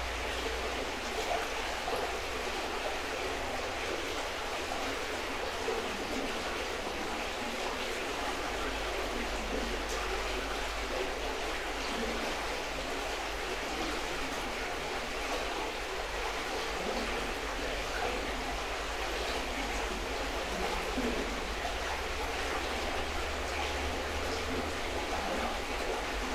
Utena, Lithuania, under the small bridge
small omni mics under the bridge
Utenos rajono savivaldybė, Utenos apskritis, Lietuva, 26 October 2020